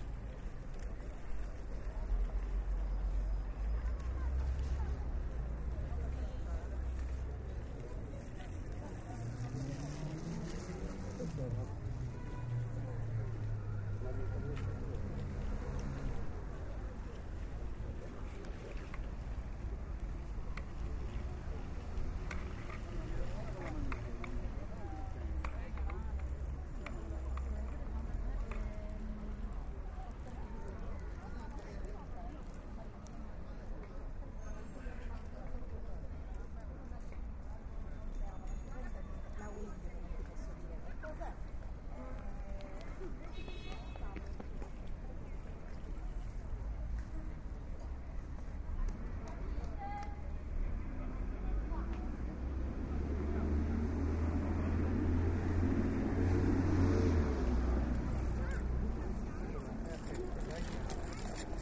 Via Libertà, Palermo domenica mattina
Passeggiata con biciclette di bambini... monopattini... (Romanlux) (edirol R-09HR)
PA, SIC, Italia